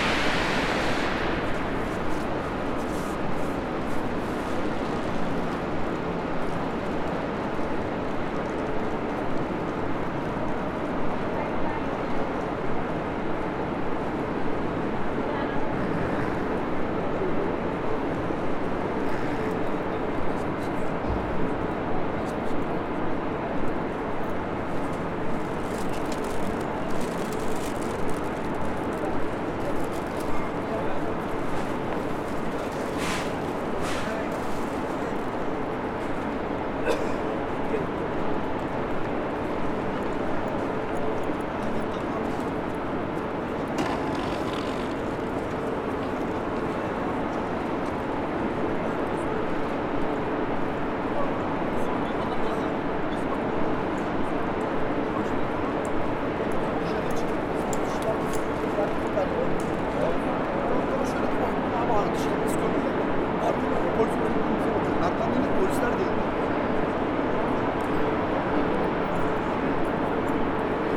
Frankfurt, S-Bahn, airport to main station - Without anouncements
On the ride back to the main station there are no anouncements of the stations made. I never experienced that. Perhaps there were not enough people entering and leaving the S-Bahn. Only the main station is anounced. Leaving to the main station, walking through the large hall that leads to the tracks, walking to the escelator...